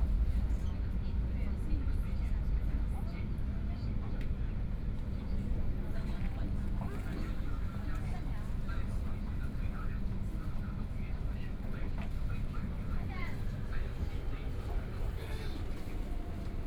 from Yuyuan Garden station to Laoximen station, Binaural recording, Zoom H6+ Soundman OKM II